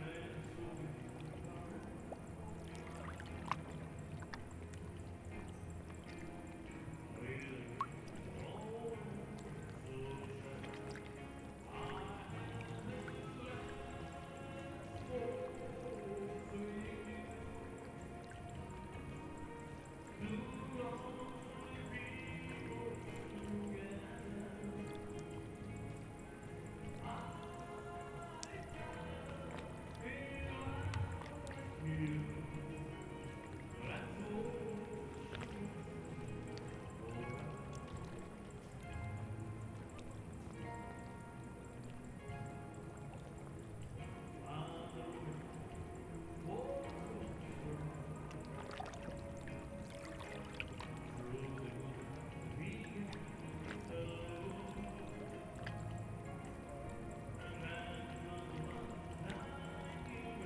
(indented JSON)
{
  "title": "Jelsa, Hrvatska - Terrace music and the waves",
  "date": "2014-08-04 21:55:00",
  "description": "The Zoom H4n recorder was placed close to the surface of the sea, and slow waves can be heard. In the distance a terrace singer is performing a song.",
  "latitude": "43.16",
  "longitude": "16.70",
  "altitude": "10",
  "timezone": "Europe/Zagreb"
}